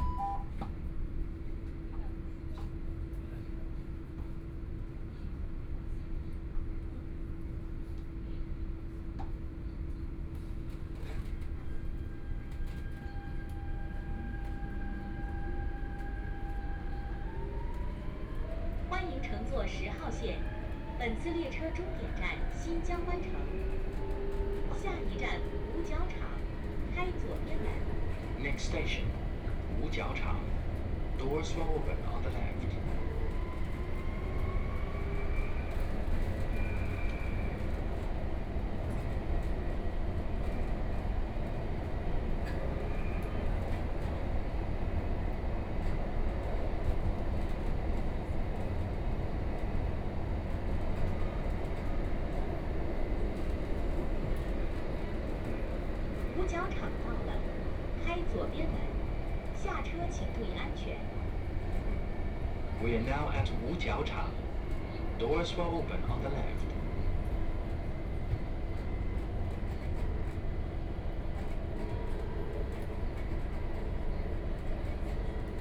{
  "title": "四平路, Shanghai - in the Subway",
  "date": "2013-11-21 17:29:00",
  "description": "From Tongji University Station to Wujiaochang station, Binaural recording, Zoom H6+ Soundman OKM II",
  "latitude": "31.29",
  "longitude": "121.50",
  "altitude": "5",
  "timezone": "Asia/Shanghai"
}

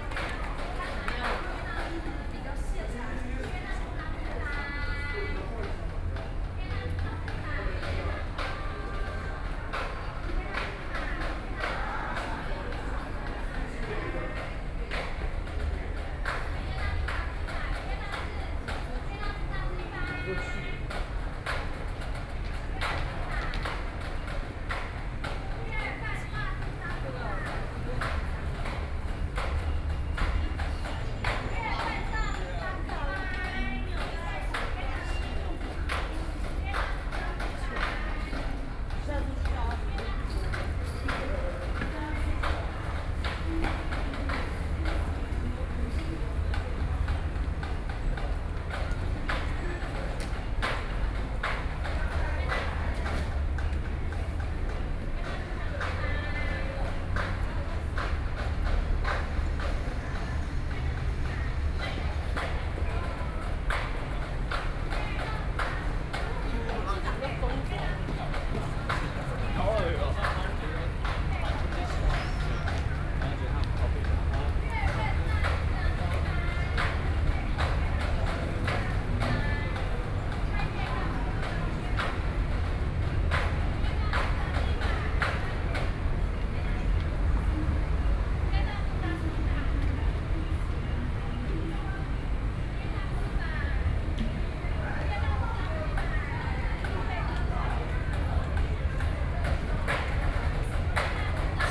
{
  "title": "Chiang Kai-Shek Memorial Hall Station, Taipei - MRT entrance",
  "date": "2013-05-24 21:31:00",
  "description": "MRT entrance, Sony PCM D50 + Soundman OKM II",
  "latitude": "25.04",
  "longitude": "121.52",
  "altitude": "9",
  "timezone": "Asia/Taipei"
}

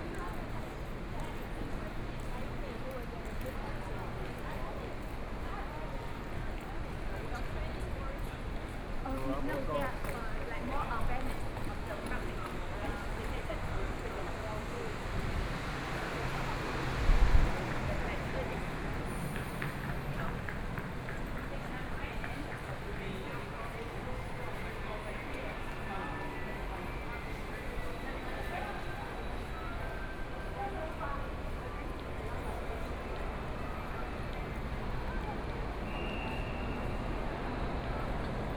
{
  "title": "Zuoying/THSR Station, Taiwan - Walking in the station hall",
  "date": "2014-05-21 20:02:00",
  "description": "Walking in the station hall",
  "latitude": "22.69",
  "longitude": "120.31",
  "altitude": "12",
  "timezone": "Asia/Taipei"
}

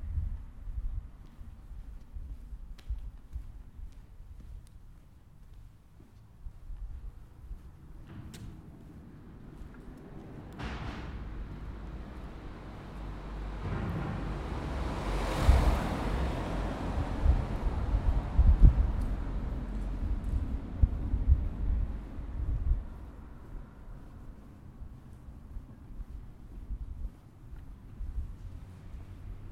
{
  "title": "Calle Freud, Madrid, España - The car tunnel sounds",
  "date": "2018-11-29 18:40:00",
  "description": "This recording shows all sounds you can here in the inside of the tunnel. These sounds are affected by the particular acoustic of the place.\nYou can hear:\n- Passing cars\n- Sewer cover that sounds when cars pass over it\n- Sound of echo and reverb\n- Another sewer sound far away\n- Passing motorbike\n- Cars passing over speed bumps\nGear:\nZoom h4n\n- Cristina Ortiz Casillas\n- Daniel Daguerre León\n- Carlos Segura García",
  "latitude": "40.54",
  "longitude": "-3.70",
  "altitude": "730",
  "timezone": "Europe/Madrid"
}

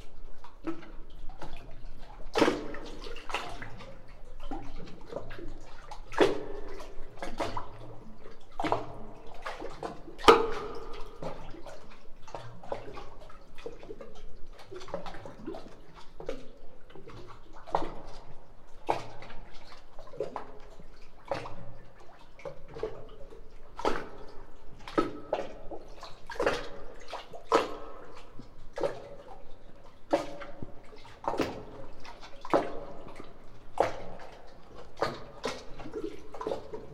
{
  "title": "Kuopio, Finland - harbour-wave-boat-rhythm-play",
  "date": "2016-10-28 22:11:00",
  "description": "During ANTI festival in Kuopio 2016 the artist LAB launched the concept of 'overmapping'. This also contains a 'Sound memory' layer. This recording was a memory of many locals.",
  "latitude": "62.89",
  "longitude": "27.70",
  "altitude": "74",
  "timezone": "Europe/Helsinki"
}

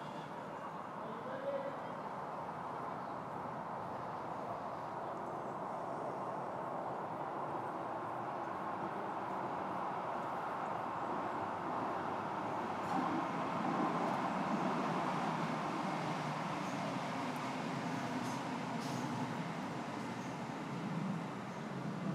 {
  "title": "Sales canal",
  "date": "2010-09-22 17:38:00",
  "description": "canal, school, children playing",
  "latitude": "53.42",
  "longitude": "-2.32",
  "altitude": "29",
  "timezone": "Europe/London"
}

